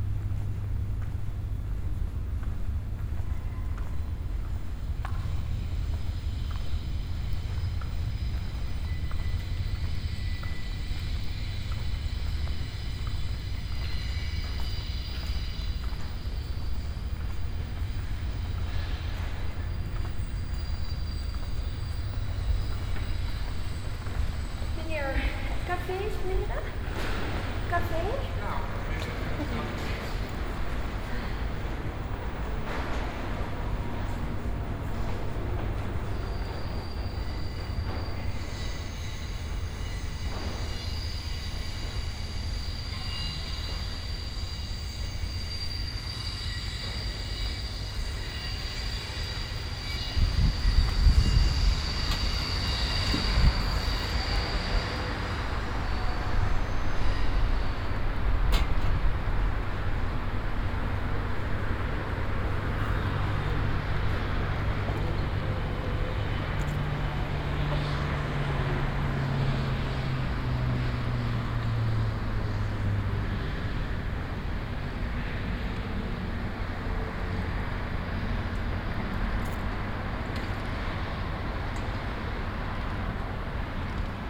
short sound walk through the public spaces of the Music Building at the IJ, Amsterdam harbour . A tall cruiseship is waiting for departure; because its a hot day doors are opened and the sounds of the ships in the harbour is resonating in the public space of the building; on the other side of the building sounds of trains and cars are coming through.
18 July 2010, 16:42